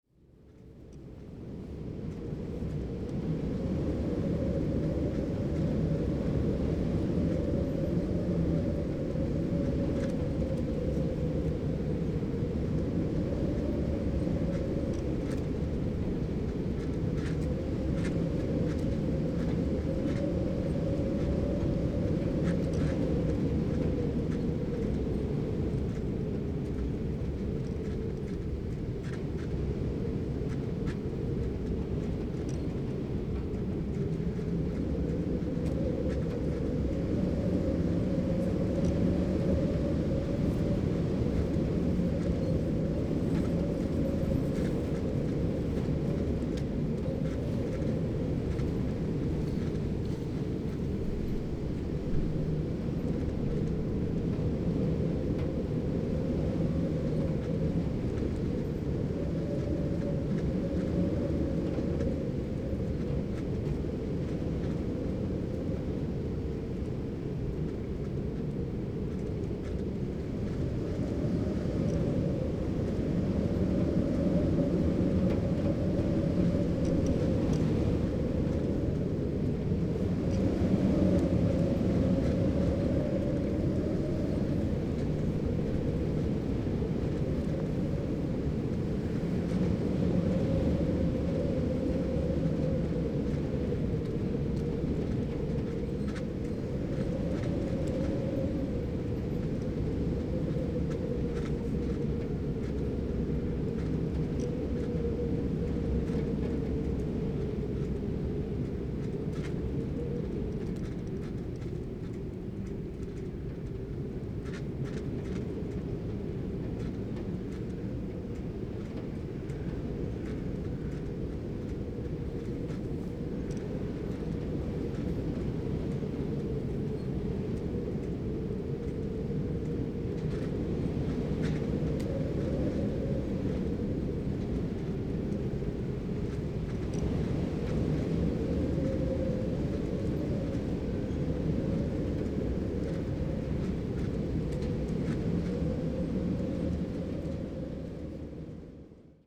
Caleta Maria, Región de Magallanes y de la Antártica Chilena, Chile - storm log - caleta maria storm
Outside Caleta Maria hut, wind W 60km/h
Founded in 1942, Caleta Maria sawmill was the last of the great lumber stablishments placed in the shore of the Almirantazgo sound.